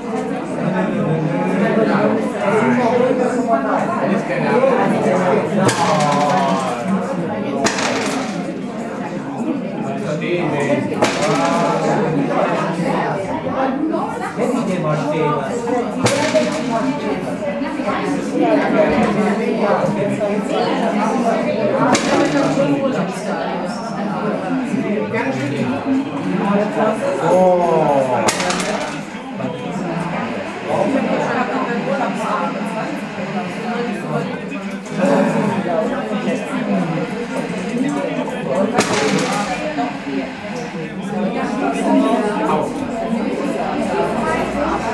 gelsenkirchen-horst, devensstrasse - gaststätte norkus